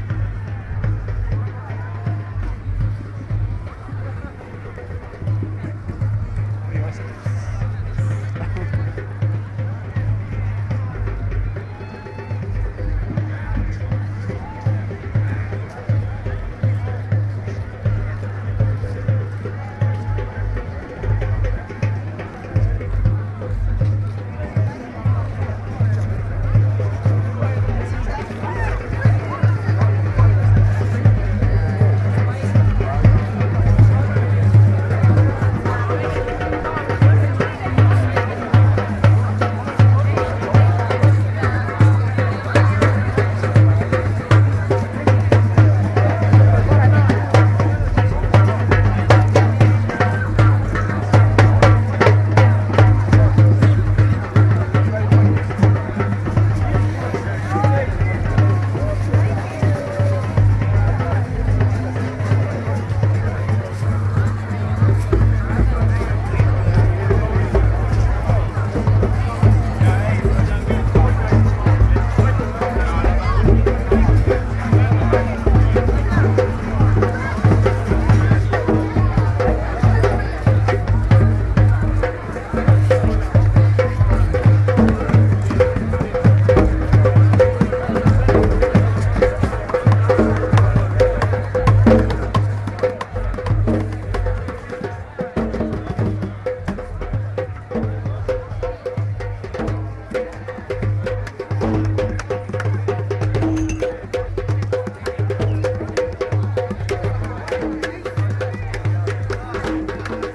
Viljandi lake beach - (binaural) folk jamming
soundwalk through folk music festival unofficial night moods
Viljandimaa, Estonia, 23 July 2010